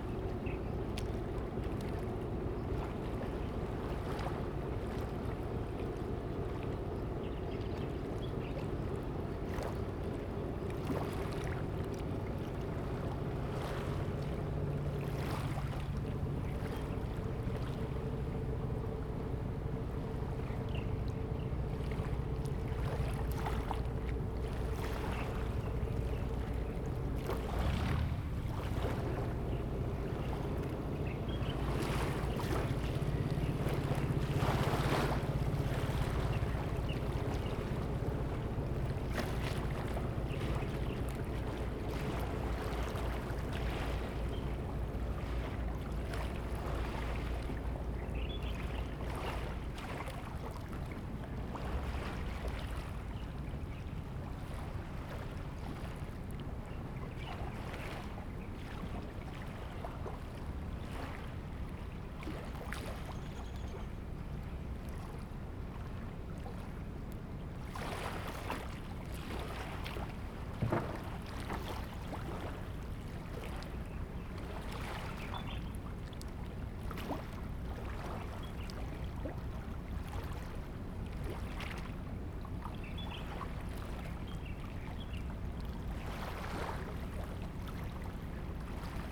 興海漁港, Manzhou Township - Pier area at fishing port
Pier area at fishing port, birds sound, Pier area at fishing port, Fishing boat returns to the dock, tide
Zoom H2n MS+XY